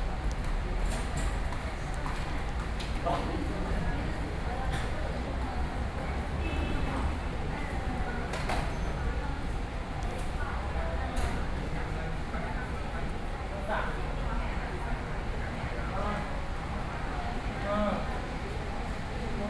Taipei Chang Gung Memorial Hospital, Taipei City - walking into the hospital
Songshan District, 台北長庚醫院, 29 October 2012